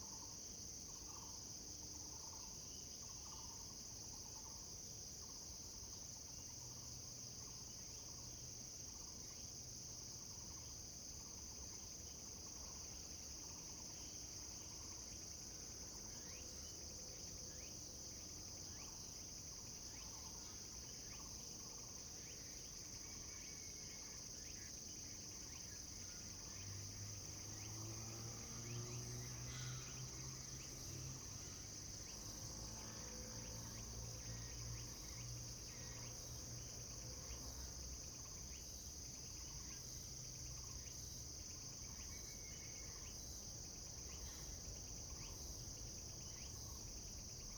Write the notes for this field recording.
Near the high-speed railway, Birds sound, traffic sound, Zoom H2n MS+XY